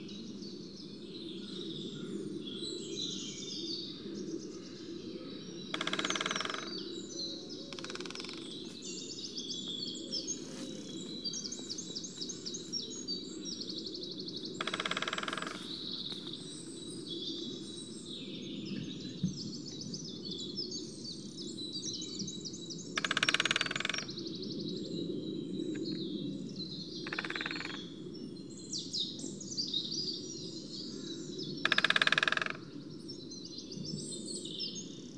Pamber Forest Nature Reserve, Silchester UK - Greater Spotted Woodpeckers drumming
There is talk of Lesser Spotted Woodpeckers in this location, ( I didn't see one). I spent the second of two mornings in this wonderful wood listening to Greater Spotted Woodpeckers drumming, it is their time I think. Sony M10 inside a parabolic reflector, an unedited recording including me making adjustments and rustling about.
South East England, England, United Kingdom, 22 March 2022, 09:08